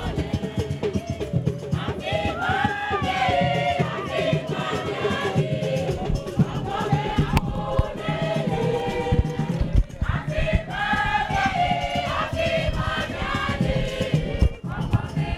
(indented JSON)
{
  "title": "Togbe Tawiah St, Ho, Ghana - church of ARS service: song",
  "date": "2004-08-26 18:19:00",
  "description": "church of ARS service: song",
  "latitude": "6.61",
  "longitude": "0.47",
  "altitude": "503",
  "timezone": "Africa/Accra"
}